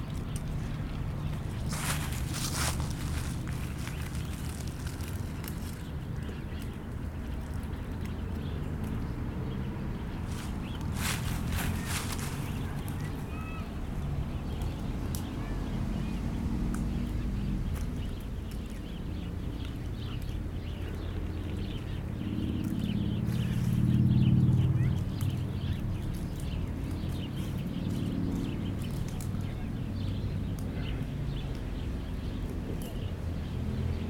Quai Edouard Serullaz, Seyssel, France - Sous le savonnier
Au bord du Rhône sous le savonnier, ramassage des graines . Bruits de la circulation à Seyssel .
Auvergne-Rhône-Alpes, France métropolitaine, France, 2 October 2021, 16:45